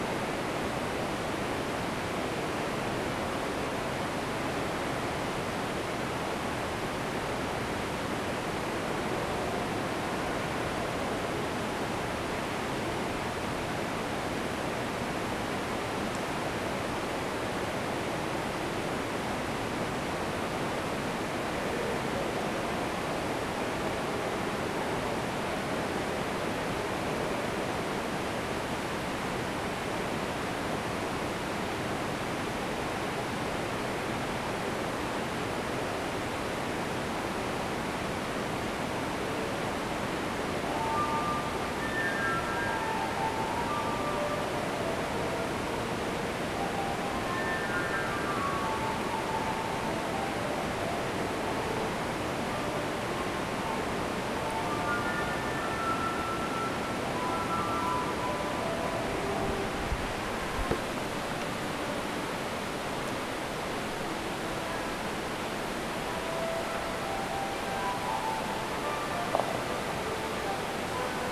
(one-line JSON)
{"title": "Pazinska Jama, Pazin, Hrvatska - Prehistoric rock", "date": "2014-08-12 17:30:00", "description": "Inside of a cave a stream is runing. A musician plays a fulte underground.", "latitude": "45.24", "longitude": "13.93", "altitude": "270", "timezone": "Europe/Zagreb"}